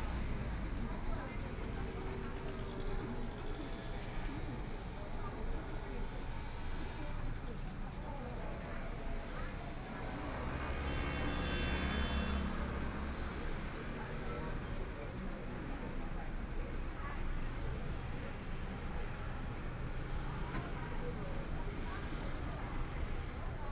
花蓮市國富里, Taiwan - Corner the market
sitting in the Corner of the market, Traffic Sound
Binaural recordings
Zoom H4n+ Soundman OKM II
Hualien County, Taiwan, 24 February